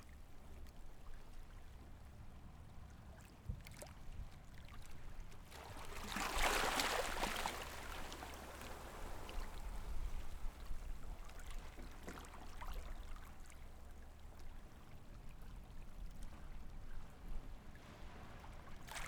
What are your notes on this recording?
Sound of the waves, Very hot weather, Zoom H6 XY